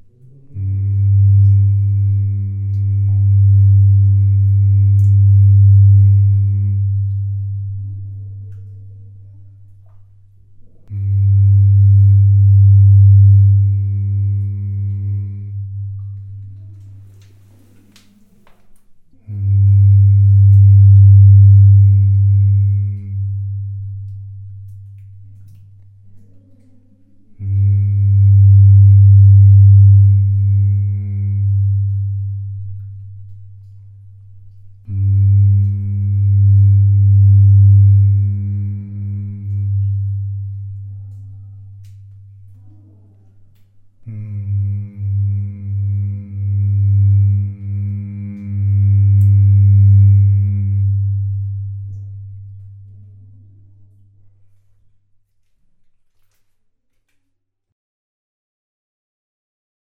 Rimogne, France - Singing underground mine
Into the underground slate quarry, I found a reverberation tunnel. It's always the same : small tunnel, smooth walls, everything straight ahead, a ceiling diminishing very slowly. Only one place works and considering that the tunnel is big or small, only one note works. In order to show aporee audience how sounds reverberate in a slate quarry, I sing a few notes. Unederground mines are so funny !